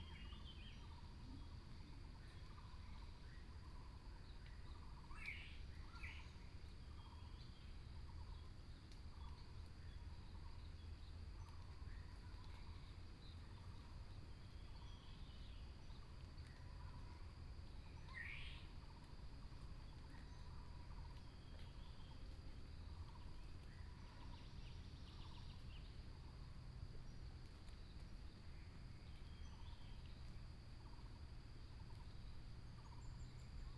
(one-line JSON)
{"title": "埔里鎮桃米里, Nantou County, Taiwan - In the woods", "date": "2016-04-19 06:38:00", "description": "Bird sounds, Sound of insects, Morning road in the mountains", "latitude": "23.94", "longitude": "120.92", "altitude": "518", "timezone": "Asia/Taipei"}